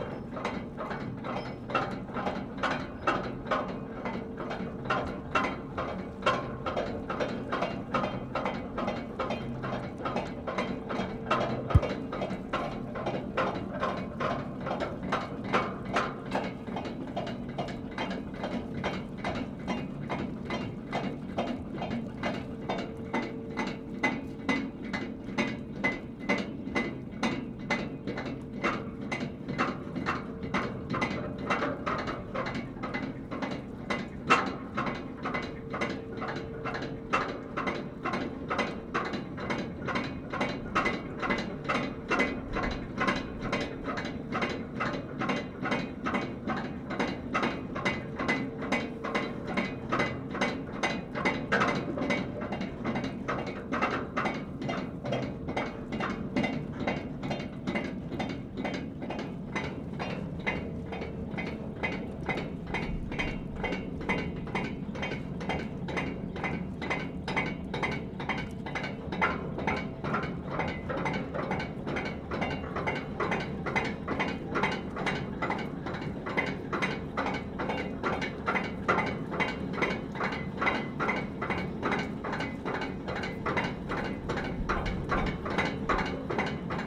King Harry Ferry travelling across the river Fal
OKTAVA M/S + Cheap D.I.Y Contact Mic